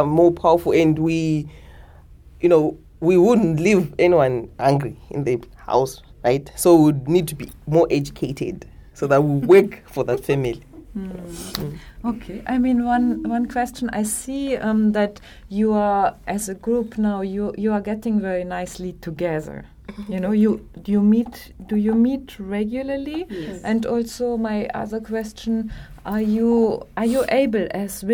Radio Wezhira, Masvingo, Zimbabwe - Radio Wezhira sistaz...
Here, they talk about their experiences as women journalists in and for their local community and how they generally see the situation of women in their society…
The entire recordings are archived at:
23 October 2012, ~10:00